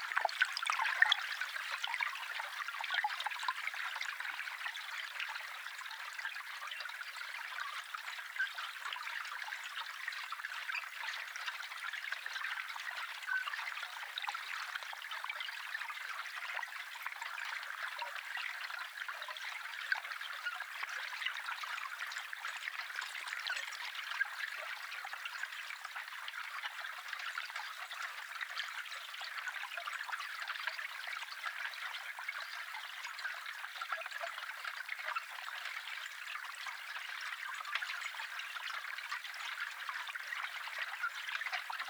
Overschiese Dorpsstraat, Rotterdam, Netherlands - Underwater recording. Windy day
Underwater recording using 2 hydrophones. Very windy day.